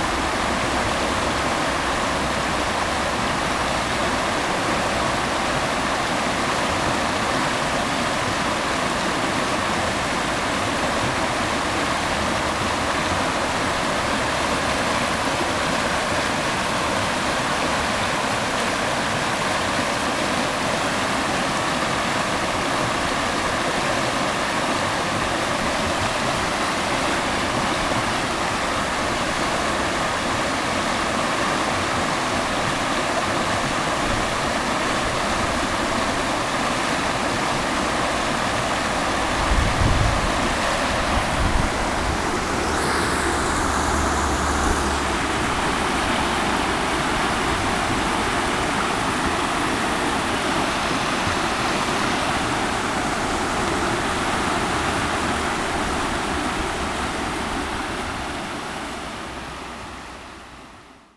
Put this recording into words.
The river "Rems" at noon on a rainy spring day.